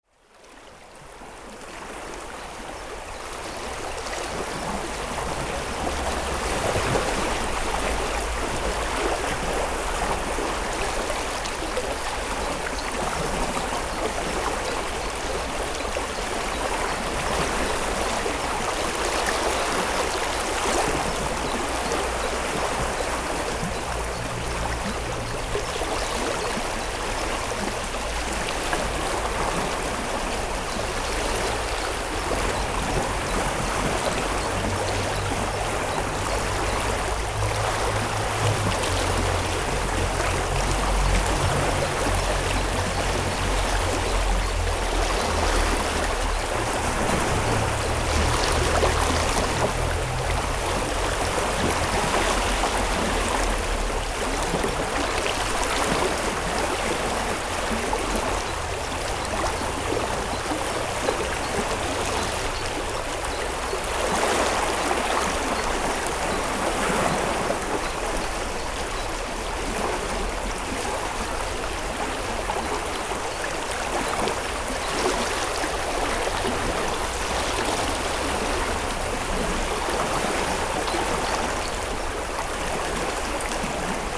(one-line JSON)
{"title": "Court-St.-Étienne, Belgique - Flood", "date": "2016-06-08 07:05:00", "description": "The city was flooded during the night, because of a very big storm in the city of Genappe. On the morning, waves are irregular and powerful in the river.", "latitude": "50.65", "longitude": "4.56", "altitude": "62", "timezone": "Europe/Brussels"}